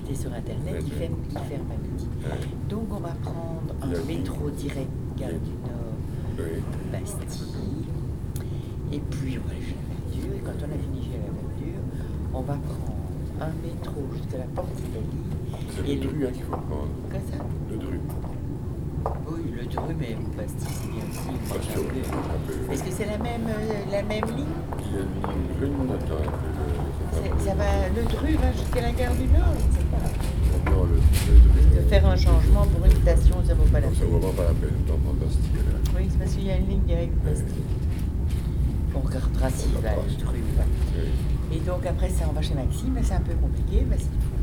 Brussels, Midi Station, on the way to Paris